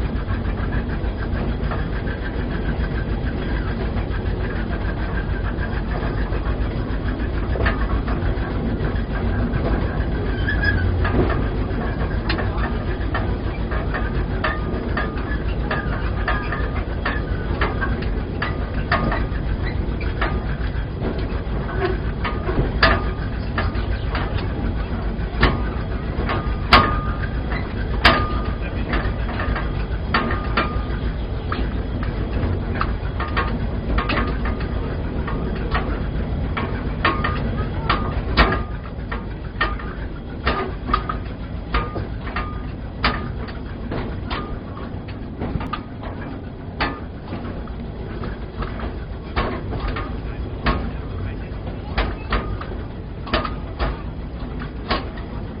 Eifelzoo, Deutschland - Fahrt mit der Parkbahn / Ride with the park railway
Eine Fahrt mit der Parkbahn: Zu hören sind der Dieselmotor, das Schlagen der Kupplungen und Stimmen der Fahrgäste.
A ride on the park train: You can hear the diesel engine, the beating of the clutches and voices of passengers.
7 July 2015, 14:30